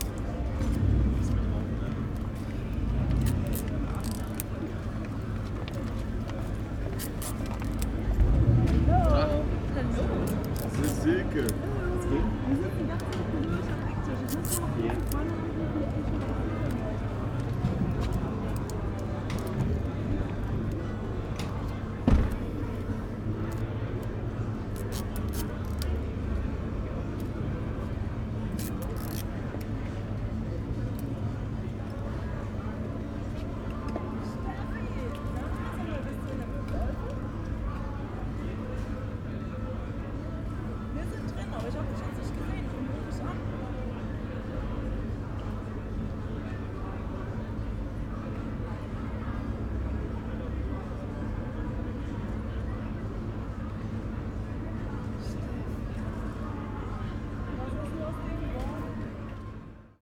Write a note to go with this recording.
29.05.2009 vor dem club möbel olfe, frau klebt plakate, folklore-band probt im hintergrund, in front of pub möbel olfe, woman sticks a bill, folk band rehearsal in the background